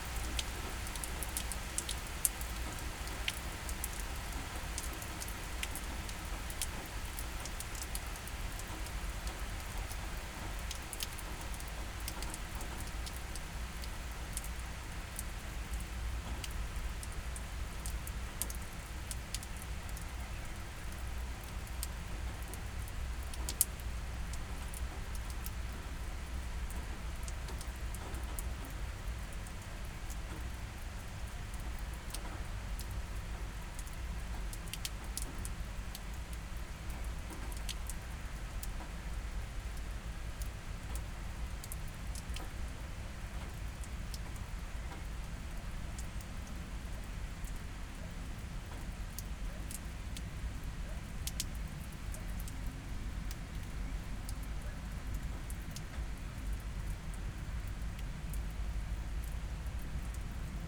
Maribor, Pekre, Pekrska gorca - rain shower at Pekrska chapel
Pekrska gorca is a small chapel on a hill with a nice view over Maribor. the hum of the city can be heard everywhere here, the hills around town seem to reflect the noise of the city. suddenly it started to rain.
(SD702 DPA4060)